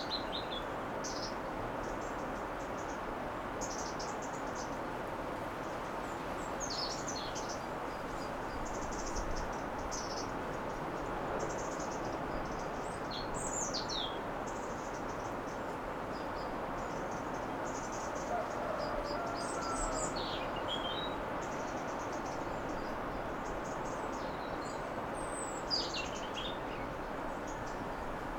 early autumn morning in ginostra, stromboli. i missed the donkeys.
20 October 2009, 06:50